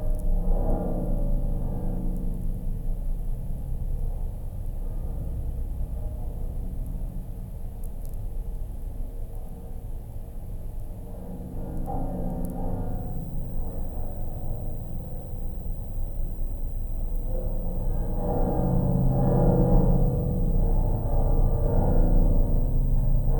strong wind. metallic bridge on a little lake. geophone on the bridge and electromagnetic antenna Priezor catching distant lightnings...
Utenos apskritis, Lietuva, 1 July 2020, 15:30